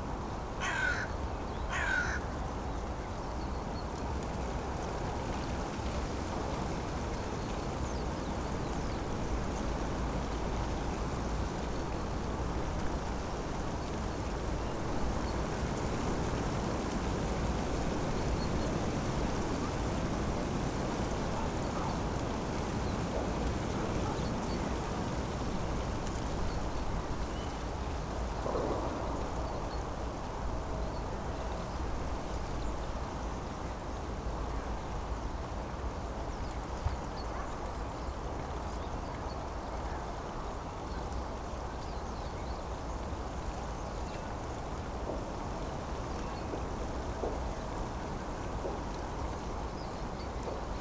Tempelhofer Feld, Berlin, Deutschland - dry leaves
at the poplar trees, dry leaves rattling
(Sony PCM D50)
Berlin, Germany, 29 December 2015, 15:40